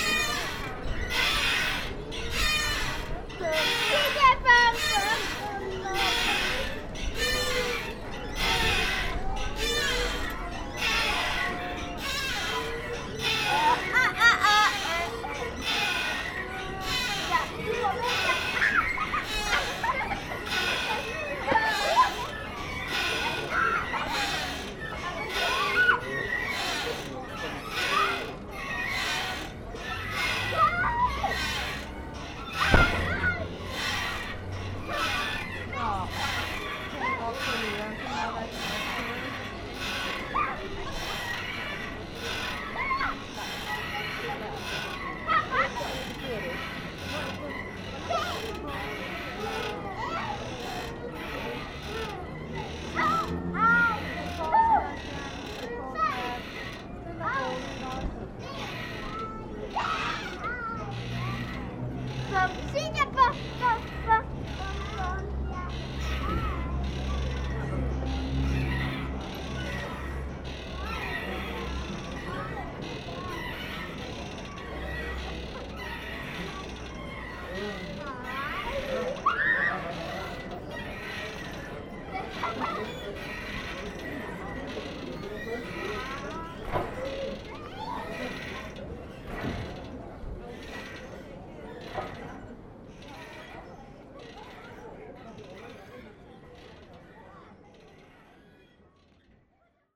København, Denmark - Funny swings
Nørrebro is a funny disctrict. It's said that Denmark is the happiest country in the world. We can understand this as you can play (and drink and fraternize) at every street corner. Here is the sound of children playing in the swings.
16 April 2019